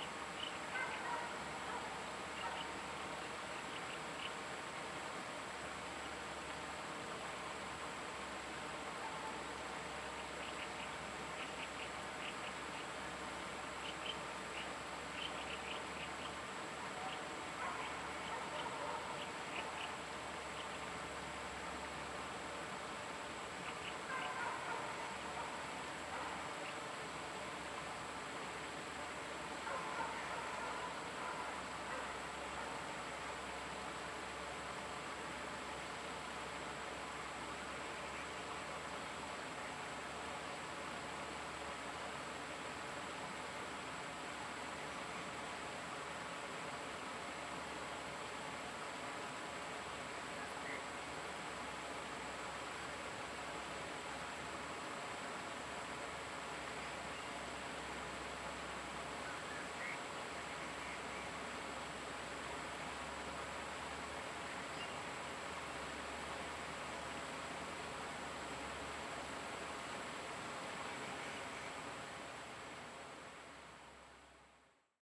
台板產業道路, Daren Township, Taitung County - On the bridge
On the bridge, traffic sound, Dog barking, Bird call, Stream sound
Zoom H2n MS+XY
Daren Township, Taitung County, Taiwan, 13 April 2018